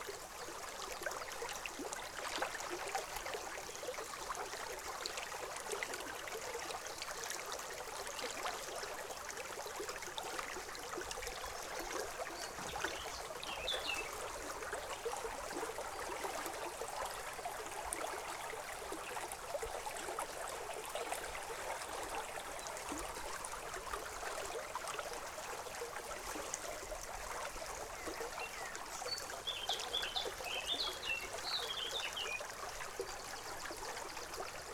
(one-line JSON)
{"title": "Switzerland, Haute Nendaz - Bisse de Millieu - Bisse de Millieu", "date": "2011-05-23 12:46:00", "description": "Near Haute Nendaz, Switzerland, there are breathtaking walks through nature.\nThis region is known for the bisses, small irrigation canals, running through untouched forests and alongside medieval paths.", "latitude": "46.16", "longitude": "7.32", "altitude": "1270", "timezone": "Europe/Zurich"}